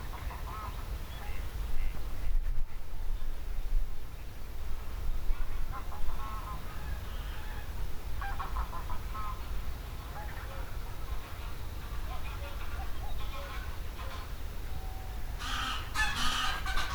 Richard Jungweg, Rotsterhaule, Nederland - geese and frogs in Easterskar
Easterskar is a protected nature area goverened by it Fryske Gea